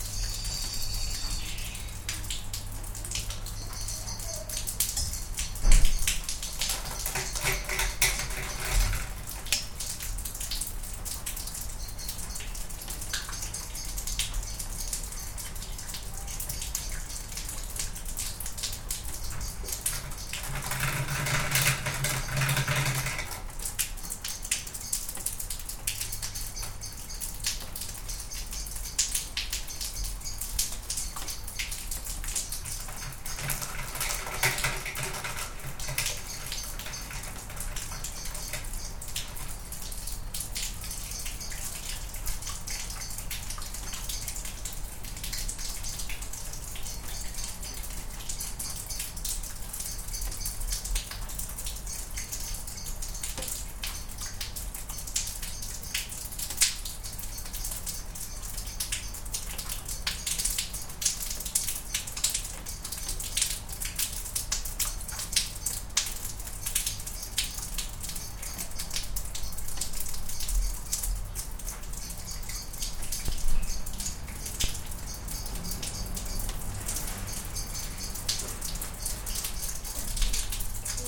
Nice clear raining drops recording on the balcony. In the middle of recording, there are interesting narrow drops falling into the big plastic pot for watering the flowers.
Slovenija, July 2020